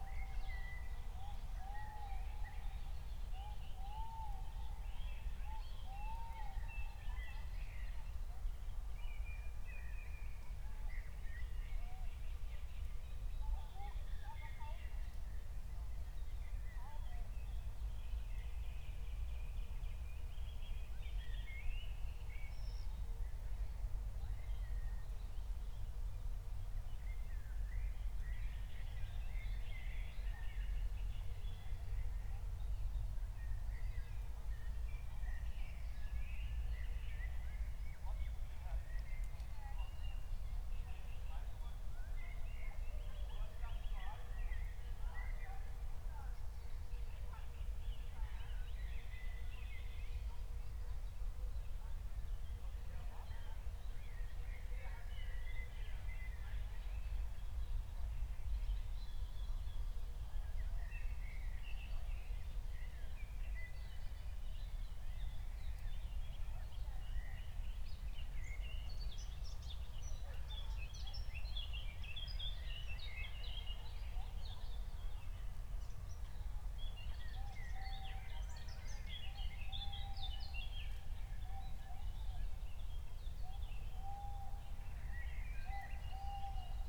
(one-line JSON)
{"title": "Berlin, Buch, Mittelbruch / Torfstich - wetland, nature reserve", "date": "2020-06-18 21:00:00", "description": "21:00 Berlin, Buch, Mittelbruch / Torfstich 1", "latitude": "52.65", "longitude": "13.50", "altitude": "55", "timezone": "Europe/Berlin"}